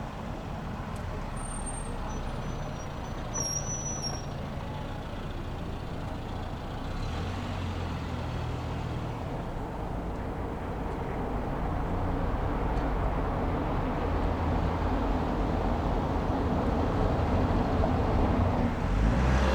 Berlin: Vermessungspunkt Friedelstraße / Maybachufer - Klangvermessung Kreuzkölln ::: 18.09.2010 ::: 02:14